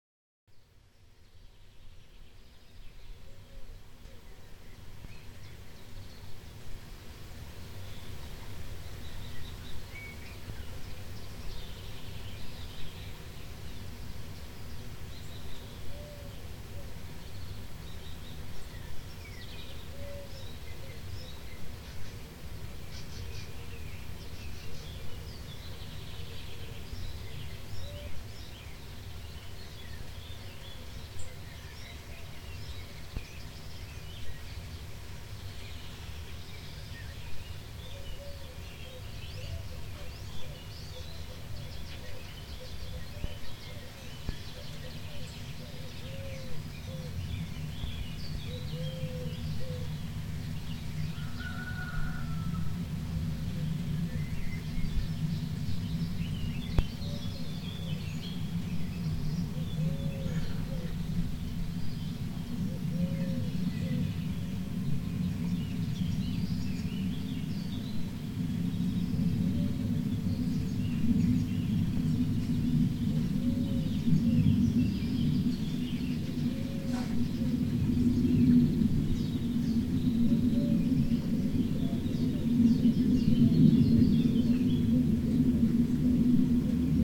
Hvězda early spring morning
at 5 AM in the park Hvezda, with birds and distant airplane
Prague-Prague, Czech Republic